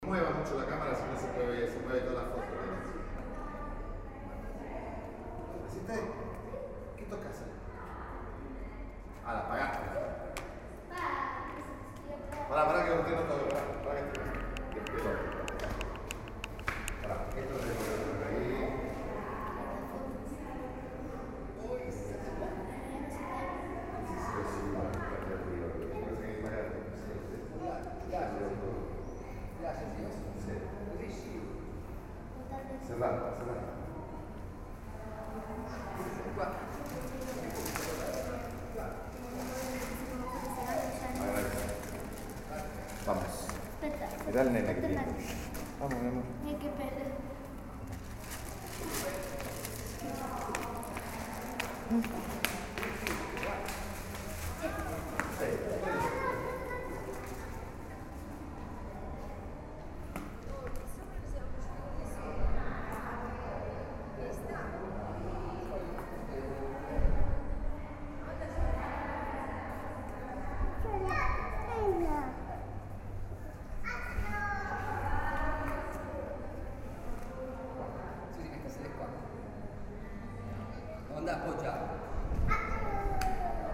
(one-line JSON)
{"title": "Ascoli Piceno AP, Italia - wld - in the chiostro", "date": "2013-07-18 18:07:00", "description": "in the cloister of the Palace of the Captains, the town hall of the city\nEdirol R-09HR", "latitude": "42.85", "longitude": "13.58", "altitude": "162", "timezone": "Europe/Rome"}